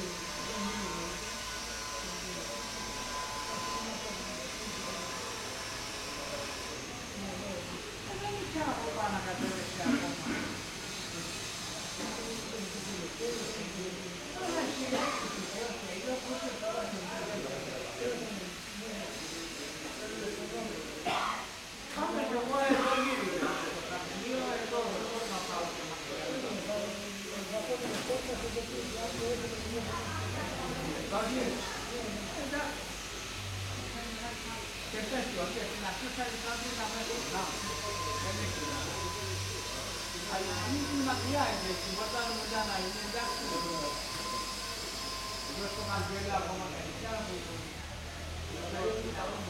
Str. Xenofontos, Corfu, Greece - Spirou Plaskoviti Square - Πλατεία Σπύρου Πλασκοβίτη
People are talking. A drill creates a low-fi soundscape.